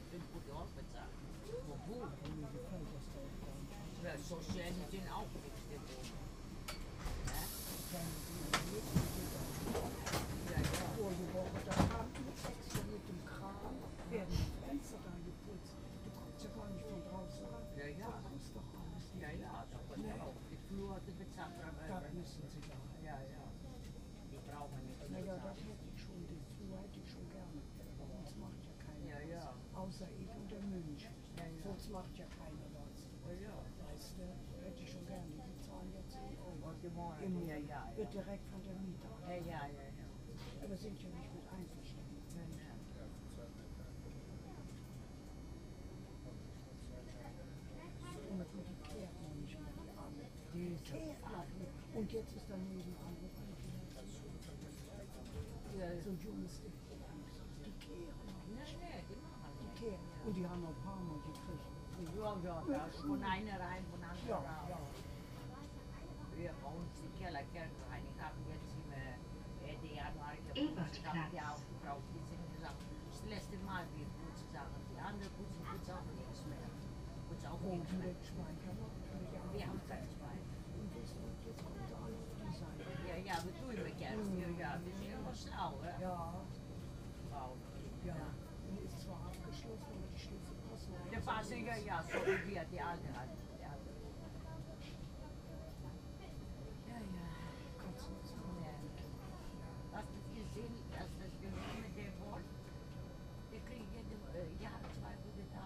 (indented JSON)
{
  "title": "Neustadt-Nord, Köln, Deutschland - Eine Krähe hackt der anderen kein Auge aus",
  "date": "2012-03-02 19:14:00",
  "description": "Two old ladys talk, complaining about neighbours who not clean the staircase properly and the former German president receiving a lifelong payment. Vontage Helge Schneider/Loriot.",
  "latitude": "50.95",
  "longitude": "6.97",
  "altitude": "52",
  "timezone": "Europe/Berlin"
}